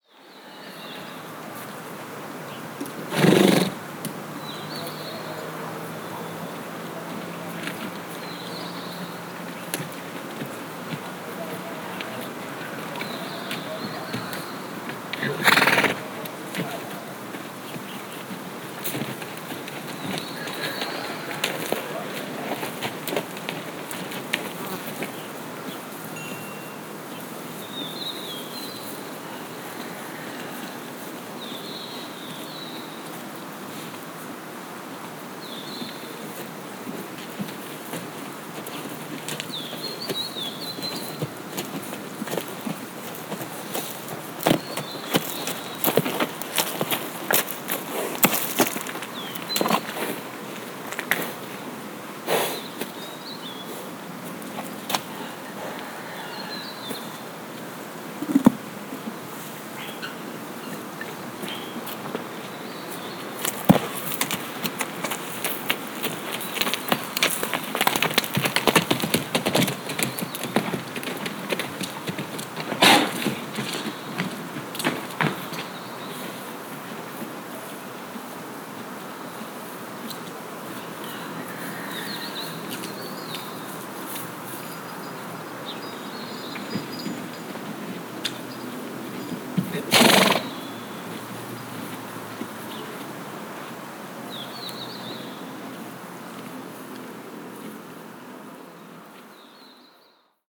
Horse, Santorini, Grecja - (57) BI Horse - close encounter
Binaural recording of a horse, that was so daredevil, he eventually defeated phonographer and I had to move few steps back.
ZoomH2n, Roland CS-10EM
18 November 2016, 11:05